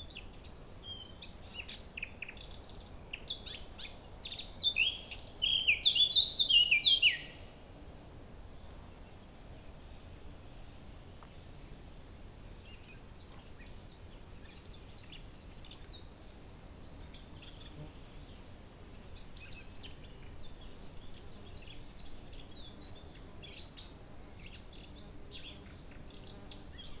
Lithuania, art the lake Luknas
Lietuva, European Union, 22 May, 14:10